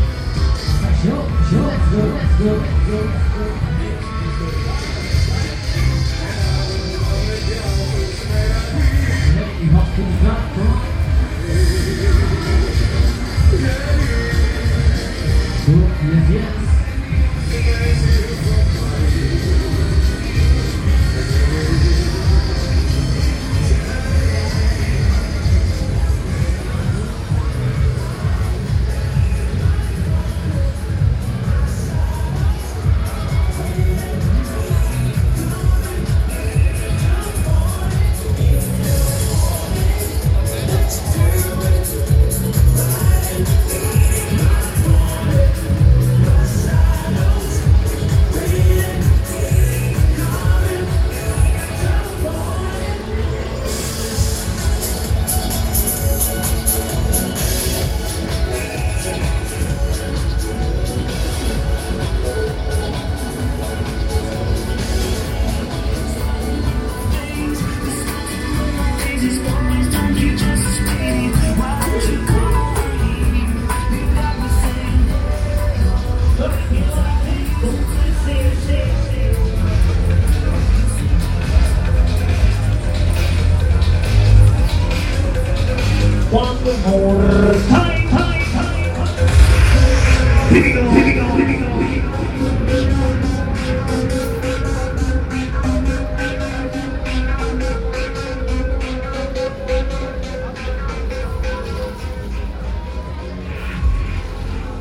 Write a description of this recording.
Soundwalk at the lunapark, where each spring a folk fair takes place.